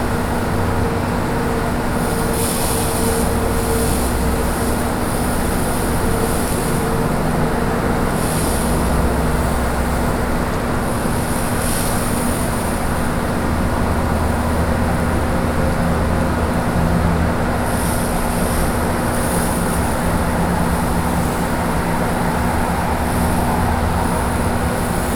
Austin, Crow Ln., Sprinkler and building air-conditioner
USA, Texas, Austin, Sprinkler, building air-conditioner, Binaural
TX, USA, 11 November 2011, 01:14